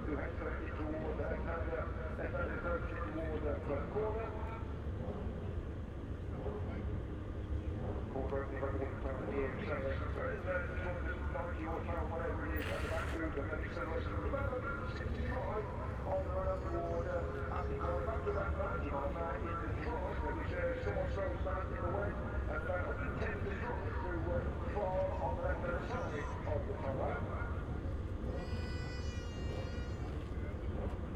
Silverstone Circuit, Towcester, UK - British Motorcycle Grand Prix 2018 ... moto grand prix ...
British Motorcycle Grand Prix 2018 ... moto grand prix ... qualifying two ... national pits straight ... lavalier mics clipped to baseball cap ...